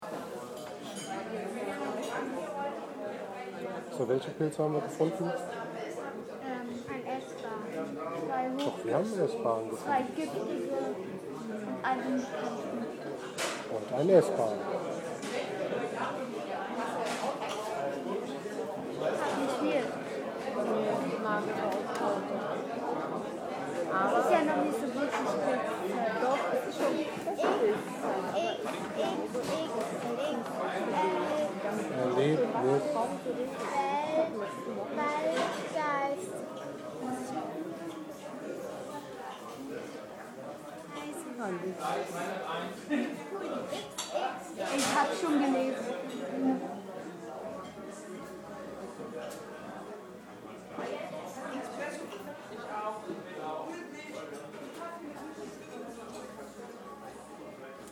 Waldgeist, Eiserne Hand, Wiesbaden
Ausruhen + speisen nach der Pilze-Suche